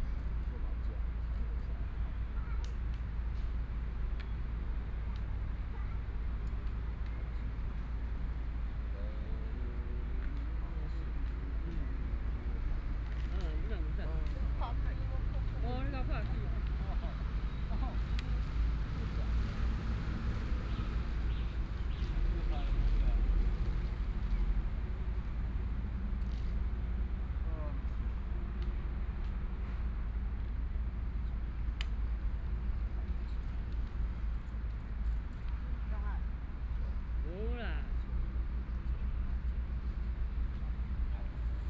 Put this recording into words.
Old man playing chess and Dialogue among the elderly, Traffic Sound, Binaural recordings, Zoom H4n+ Soundman OKM II